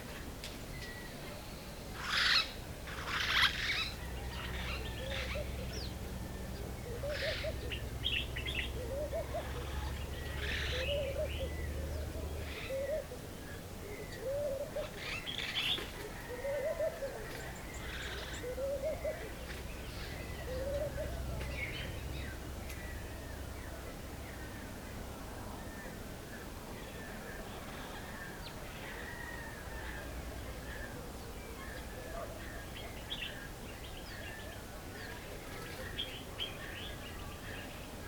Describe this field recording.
markala morning birds along the river Niger, between some gardens.